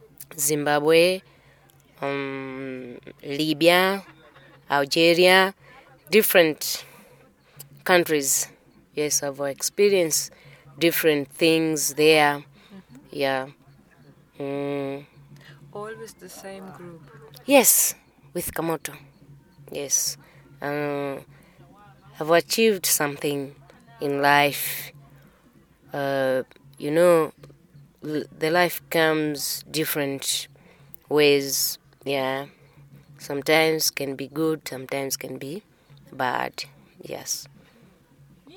Old Independence Stadium, Lusaka, Zambia - Tasila Phiri dancing around the world...
... Tasila continues with her story...
26 November 2012, ~14:00